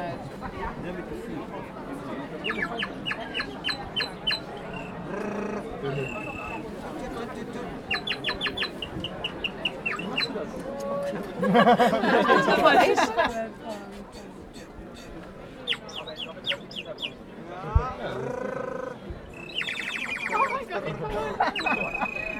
Siegburg, Deutschland - Rundgang über den mittelalterlichen Weihnachtsmarkt / Stroll through the medieval Christmas market
Ein Rundgang über den mittelalterlichen Weihnachtsmarkt. Stimmen, Handwerkergeräusche, Wasserflöten, zum Schluss ein lateinischer Gesang, der das Ende des Marktes ankündigt.
Stroll through the medieval Christmas market. Voices, craft noise, water flutes, finally a Latin song that announces the end of the market.